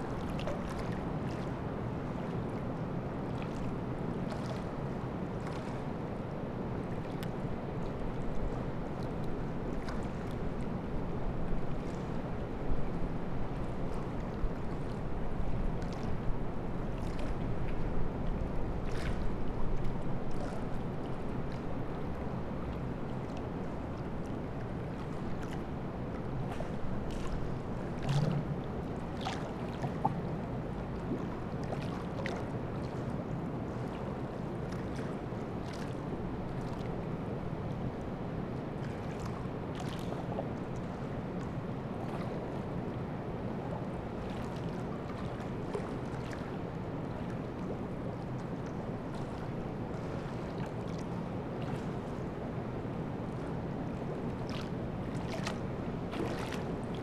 Lithuania, Anyksciai, under the bridge
night sounds of the flooded river after autumn's rain and a dam in the distance..recorded while waiting for Nurse With Wound live...
Anykščiai district municipality, Lithuania, 10 November 2012